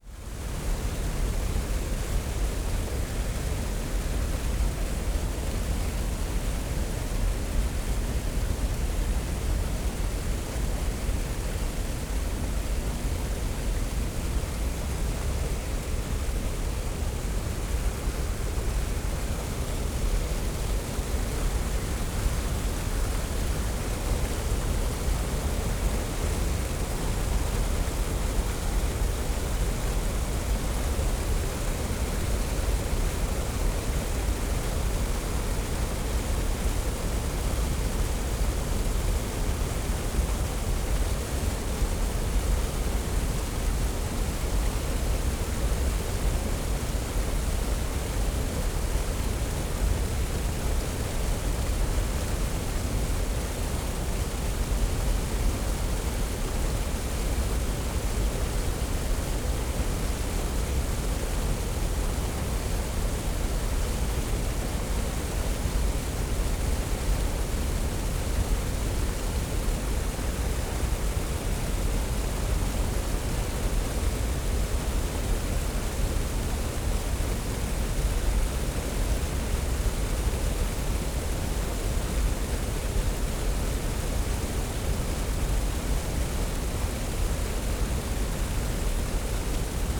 Nordhafen, Wedding, Berlin - river Panke weir
artificial waterfall at a weir of river Panke creates a deep drone
(SD702, DPA4060)